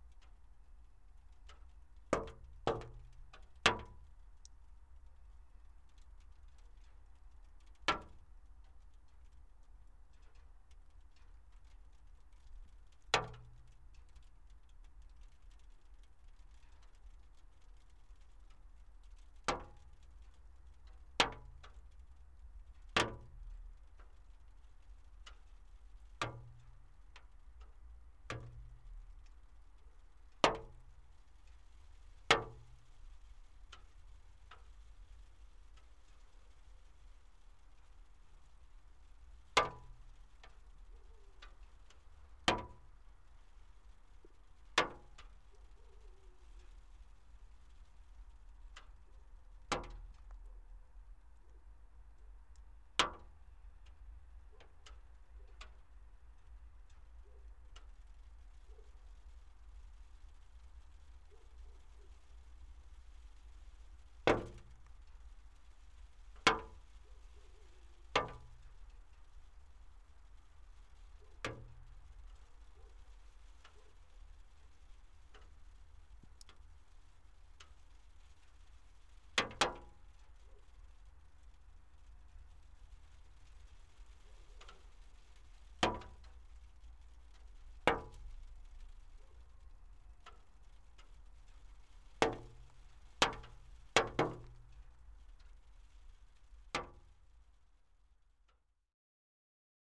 Utena, Lithuania, water drops on windowsill
Melting water drops on windowsill